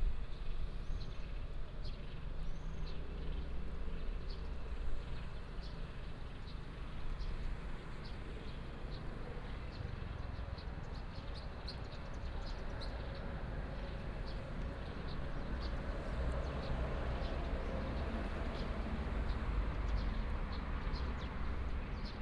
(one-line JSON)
{"title": "大武漁港環港路, Dawu Township - Beside the fishing port", "date": "2018-03-23 13:27:00", "description": "Beside the fishing port, birds sound, Traffic sound\nBinaural recordings, Sony PCM D100+ Soundman OKM II", "latitude": "22.34", "longitude": "120.89", "altitude": "6", "timezone": "Asia/Taipei"}